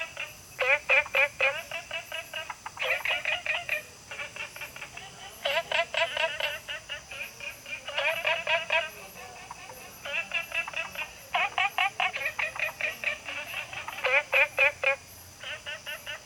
青蛙ㄚ 婆的家, Taomi Ln., Puli Township - Small ecological pool
Frogs chirping, Small ecological pool
Zoom H2n MS+XY